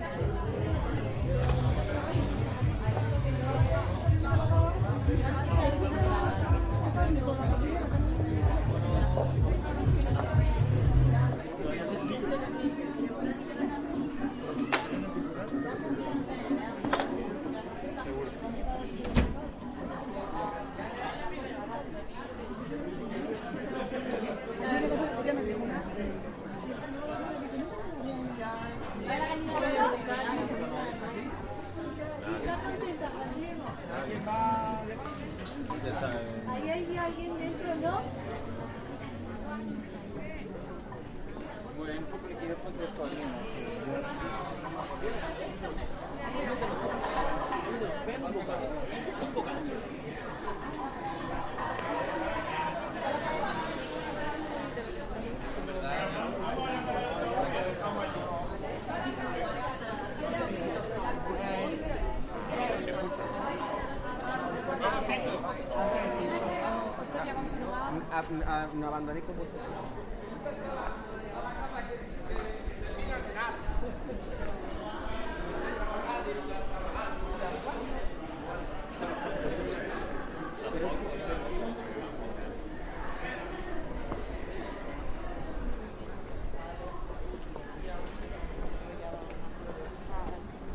Caminando por la calle Calderería Nueva, Granada - Paisaje sonoro Calle Calderería Nueva
Calle Calderería Nueva, 18010 Granada.
Caminando calle abajo. Grabado con ZOOM-H1.